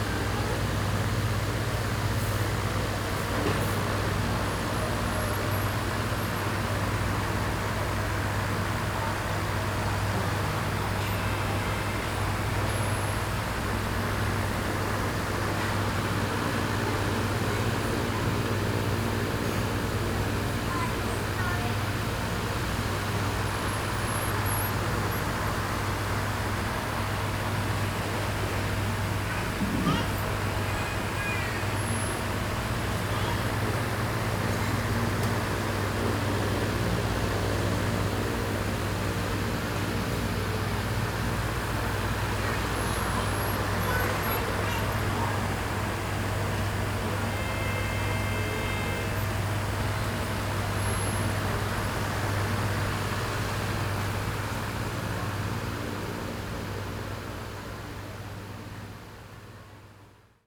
Khok Lo, Mueang Trang, Trang, Thailand - drone log 08/03/2013
fan, trang airport
(zoom h2, binaural)